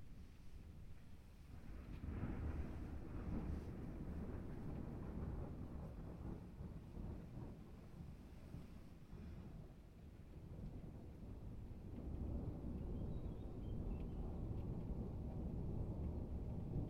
{
  "title": "Pont des Demoiselles, Toulouse, France - Orage d'un matin dété...",
  "date": "2014-07-24 06:30:00",
  "description": "zoom h4 + soundman",
  "latitude": "43.59",
  "longitude": "1.46",
  "altitude": "156",
  "timezone": "Europe/Paris"
}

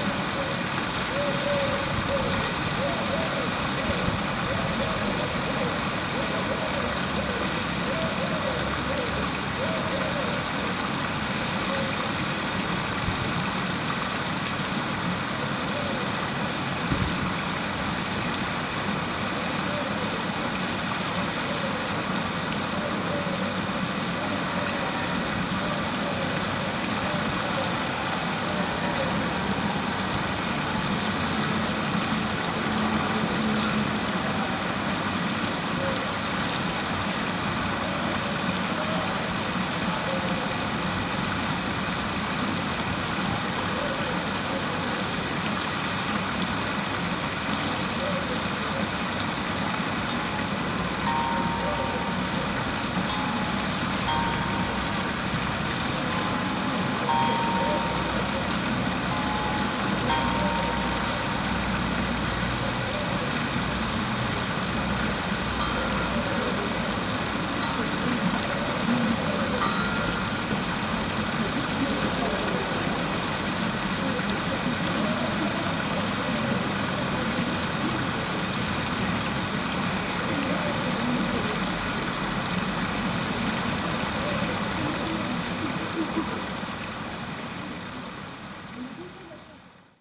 {
  "title": "place 19 avril 1944, rouen",
  "date": "2011-11-27 14:55:00",
  "description": "a quiet square in rouen on a sunday aftternoon - passersby and doves, but all businesses closed",
  "latitude": "49.44",
  "longitude": "1.09",
  "altitude": "23",
  "timezone": "Europe/Paris"
}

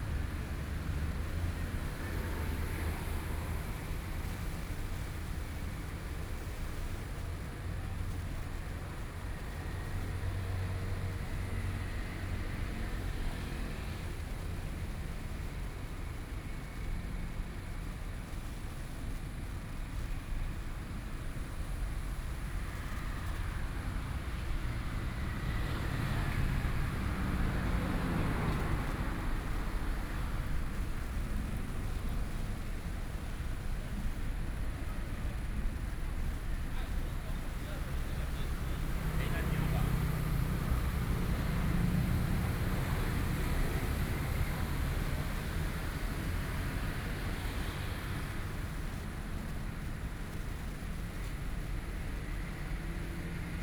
{
  "title": "Beitou - Beside the road",
  "date": "2013-08-06 22:38:00",
  "description": "Beside the road, Environmental Noise, Sony PCM D50 + Soundman OKM II",
  "latitude": "25.13",
  "longitude": "121.50",
  "altitude": "9",
  "timezone": "Asia/Taipei"
}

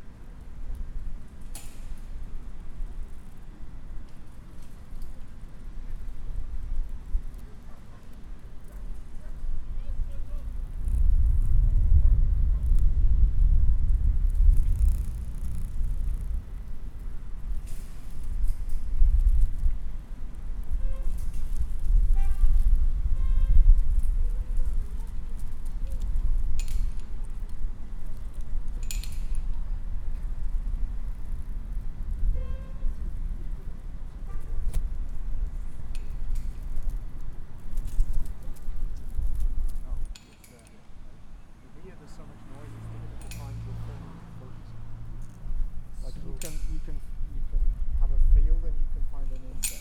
{
  "title": "Binckhorst, Laak, The Netherlands - field recording workshop",
  "date": "2012-05-21 12:30:00",
  "latitude": "52.07",
  "longitude": "4.33",
  "altitude": "1",
  "timezone": "Europe/Amsterdam"
}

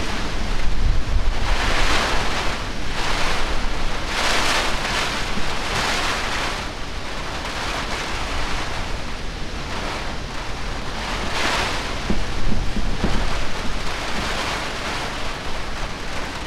{
  "title": "Chittaranjan Colony, Kolkata, West Bengal, India - Summer rain and storm",
  "date": "2020-05-20 15:16:00",
  "description": "The mic is located on my rooftop under a tin shed. This is a typical stormy and rainy day in summer. Storm is quite common in summer. If the depression on Bay of Bengal is massive then it turns into cyclones. Every year this city face two to three cyclones, which are sometimes really massive and destructive.",
  "latitude": "22.49",
  "longitude": "88.38",
  "altitude": "9",
  "timezone": "Asia/Kolkata"
}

